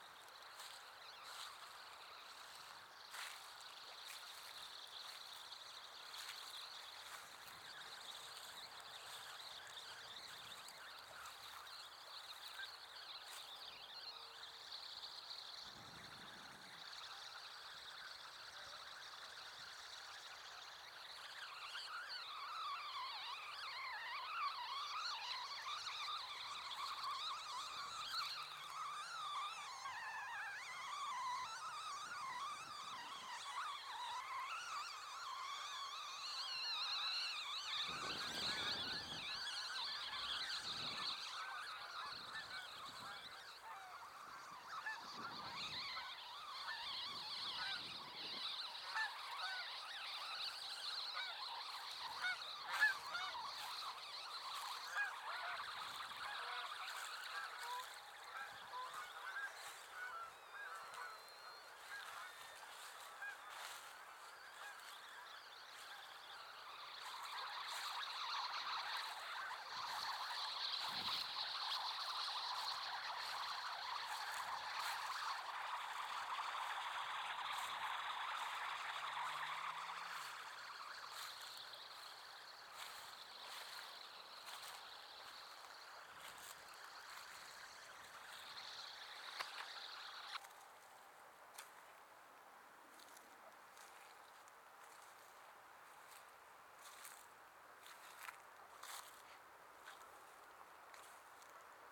Washington Park, South Doctor Martin Luther King Junior Drive, Chicago, IL, USA - Washington Park with Gendyn Plus Geese
recorded 11/05/2012 with Nick Collins' iGendyn iphone app